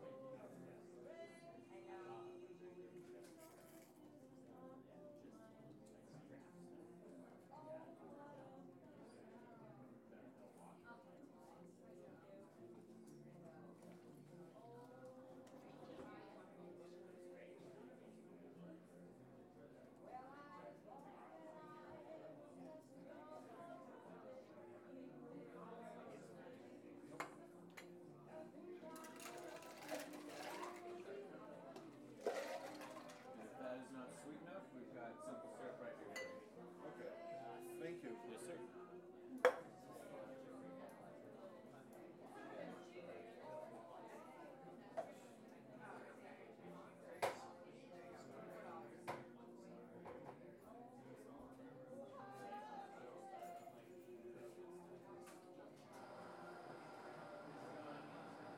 Lomas Blvd NE, Albuquerque, NM, USA - Humble Coffee - The Rush
Recorded with Tascam DR-40.